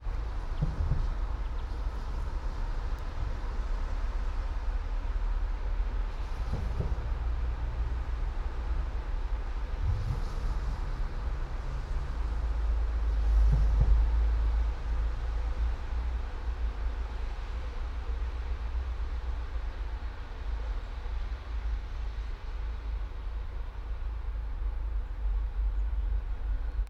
all the mornings of the ... - jan 16 2013 wed
16 January 2013, 08:05